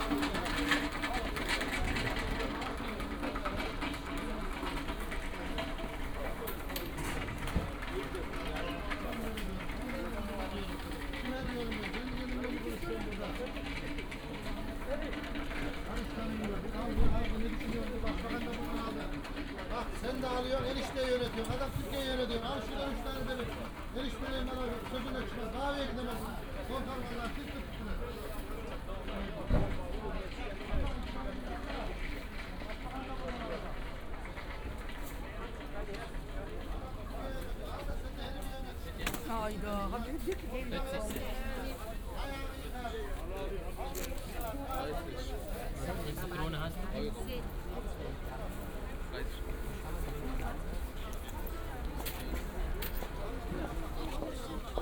afternoon market ambience, looking for bread and olives. the sound of
thin plastic bags is everywhere.
(PCM D50, OKM2)
Berlin, Germany, 17 December 2013, 15:25